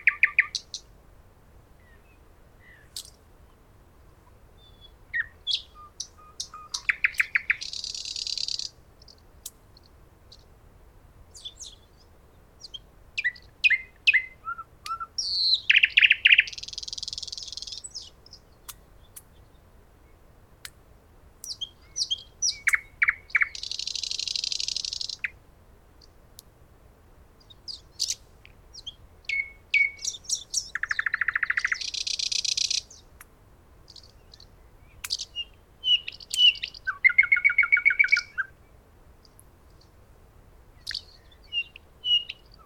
Im Dornbuschwald, Insel Hiddensee, Deutschland - Nightingale and blackbirds
Nightingale and blackbirds at Dornbusch Hiddensee
recorded with Olympus L11